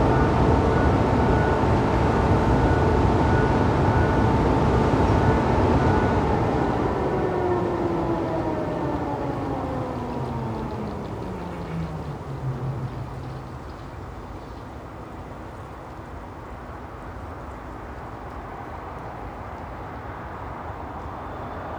Grevenbroich, Germany - Coal streaming from the conveyor belts stops, then starts again
Standing 70metres from these streams of coal one feels the dust and grit in the air. The tree trunks have a black layer on the side facing the mine. Water is sprayed into the coal to to prevent the dust. It has some effect but certainly not 100%.
2 November 2012, ~14:00